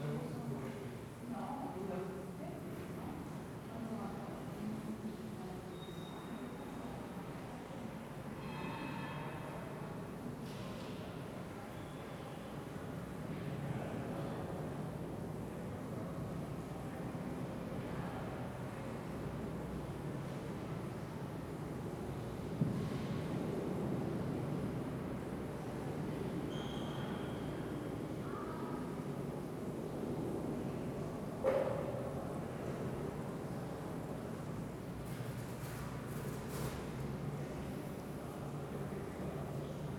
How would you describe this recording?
Sound of tourists in upper cloister of St Jerome's Monastery in Lisbon. Recorded with a handheld Tascam Dr-05